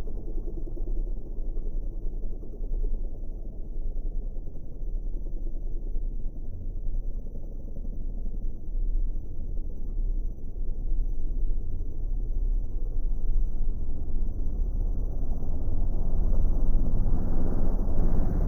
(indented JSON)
{
  "title": "Silverstone Circuit, Towcester, UK - 250cc mbikes slowed down ...",
  "date": "2017-08-25 13:45:00",
  "description": "British Motorcycle Grand Prix ... recorder has the options to scrub the speed of the track ... these are 250cc singles at 1/8x ...",
  "latitude": "52.07",
  "longitude": "-1.01",
  "altitude": "158",
  "timezone": "Europe/London"
}